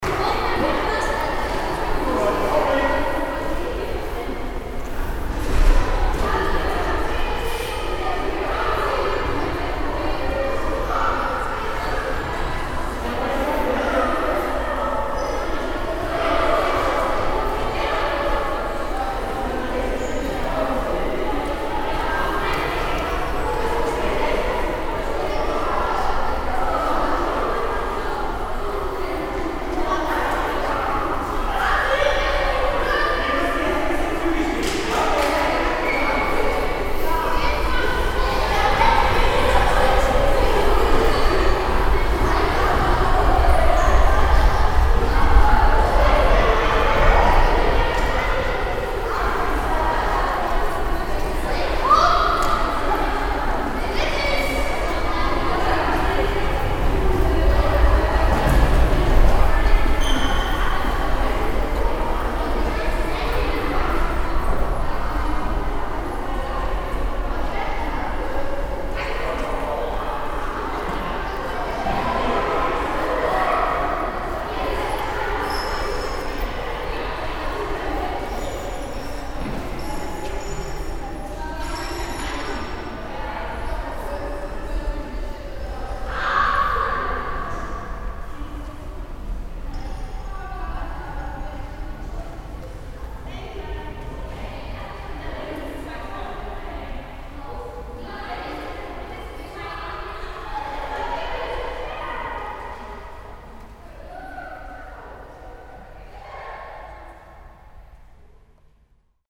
pirmasens, dynamikum, aufgang und foyer unter glasdach
mittags im foyer einer umgebauten ehemaligen schuhfabrik - jetzt science center dynamikum, eine schülergruppe verlässt die ausstellung über einen langen treppenaufgang unter einem grossen glasdach
soundmap d
social ambiences/ listen to the people - in & outdoor nearfield recordings